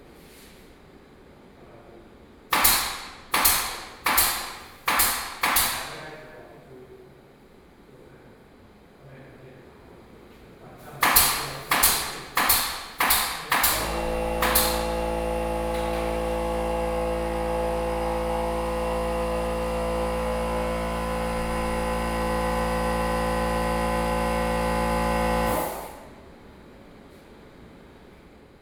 VTartsalon, Taipei - Exhibition layout
Exhibition layout, Construction, Sony PCM D50 + Soundman OKM II